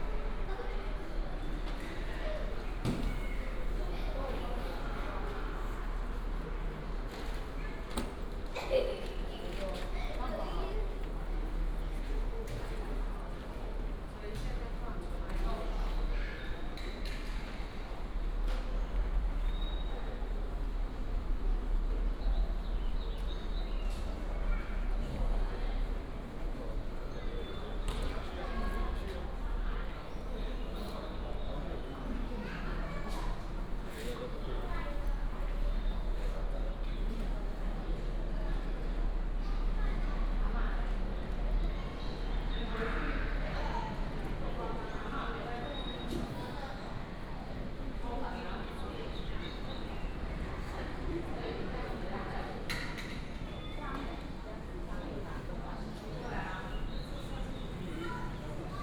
Walk at the station, From the station hall to the station platform, The train runs through